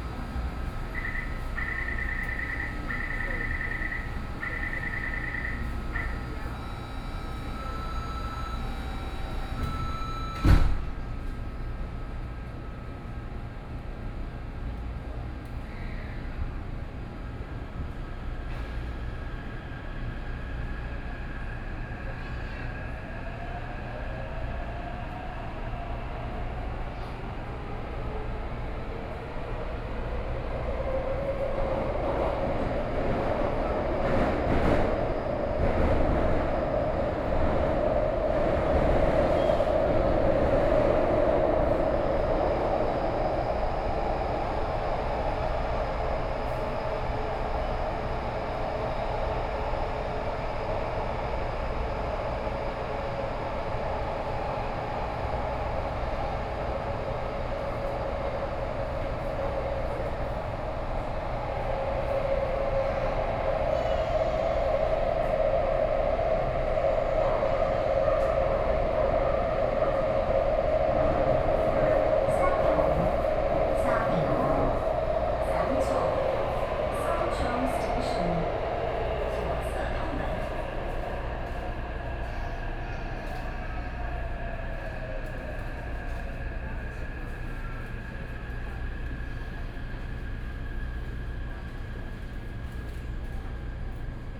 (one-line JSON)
{"title": "Sanchong District, New Taipei City - Orange Line (Taipei Metro)", "date": "2013-08-16 12:24:00", "description": "from Daqiaotou station to Sanchong station, Sony PCM D50 + Soundman OKM II", "latitude": "25.06", "longitude": "121.49", "altitude": "16", "timezone": "Asia/Taipei"}